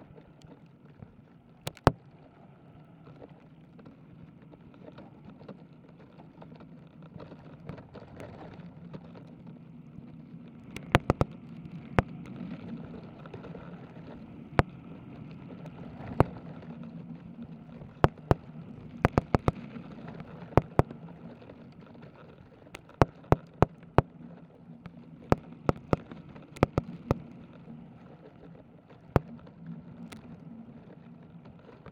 Urbanização Vila de Alva, Cantanhede, Portugal - A young eucalyptus tree bending with the wind
Sound of a young eucalyptus tree bending with the wind.
With a contact mic.